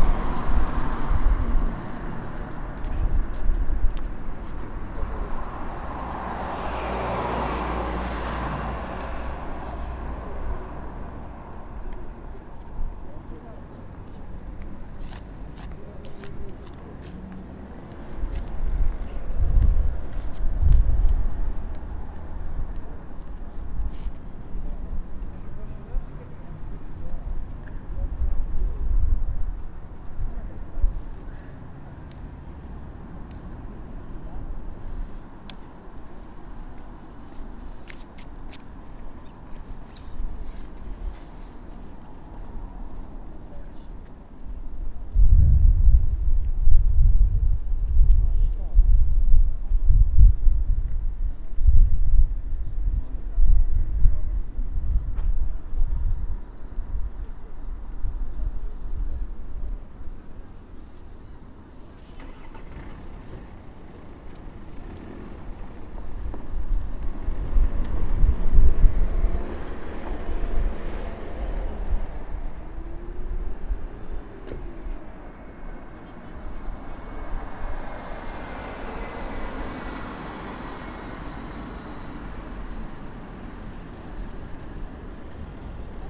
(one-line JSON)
{"title": "Santa Barbara, Lviv, Lvivska oblast, Ukraine - Cars and people on Sunday morning during covid lockdown", "date": "2020-04-12 09:10:00", "description": "This is a week before Easter in the eastern church, and the Easter in the western church. There are some people walking around in masks, cars passing by. Light wind.\nRecorded using ZOOM H1 with a self-made \"dead cat\".", "latitude": "49.78", "longitude": "24.06", "altitude": "338", "timezone": "Europe/Kiev"}